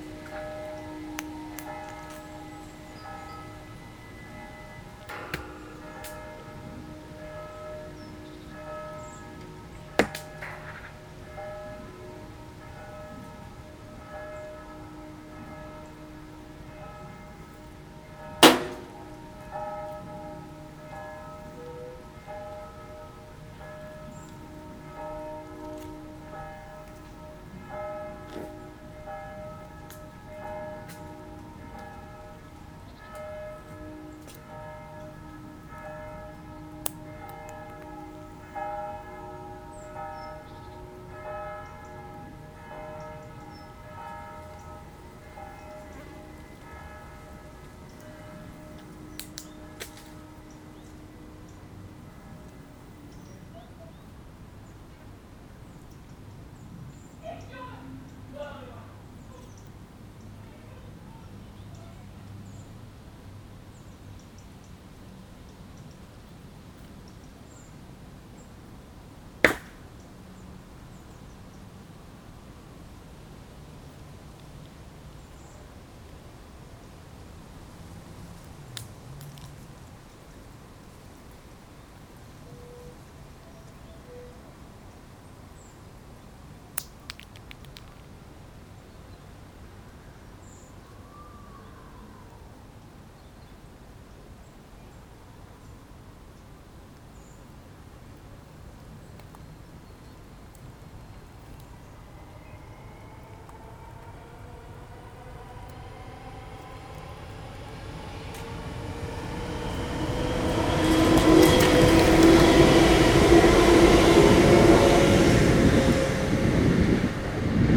Leuven, Belgique - Distant bells
Distant bells from the Heverlee church, two trains, a lot of acorns falling and bicycles circulating on it.